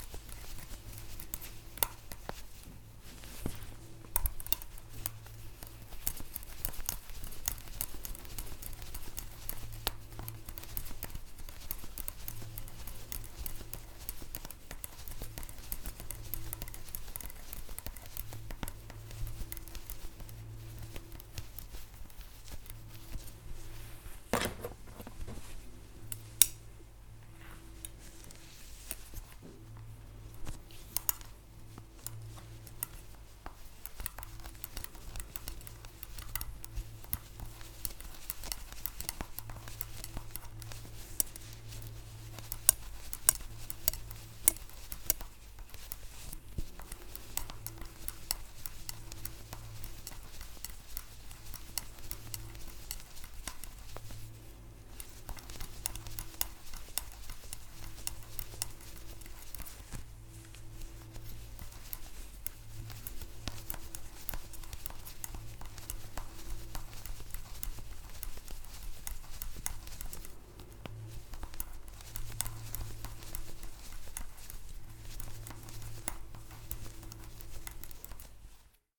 Hazel Tindall knitting with a belt, Aith, Shetland Islands, UK - Hazel Tindall - crowned the World's fastest knitter in 2008 - knitting with a traditional Shetland knitting belt
In 2008, Hazel Tindall won the International Championship for the World's Fastest Knitter, completing 262 stitches in under 3 minutes, which is completely amazing, and definitely a knitting speed to aspire to! In Shetland, knitters have traditionally knitted garments with the aid of a special belt. The belt is made of leather and has a stuffed cushion with holes in it, stuffed with horsehair. Into this stuffed cushion, the knitter can shove the end of a very long steel needle, freeing up the hand which would have otherwise held that needle, and holding the garment firmly in place while the knitter progresses with it. Many of the traditional Shetland garments such as "allovers" (sweaters covered all over with a coloured pattern) are knit on long steel needles in this way. Hazel has a beautiful collection of old knitting belts, many of which are worn and show signs of being damaged by repeatedly having sharp steel needles shoved into them!
7 August 2013, ~10:00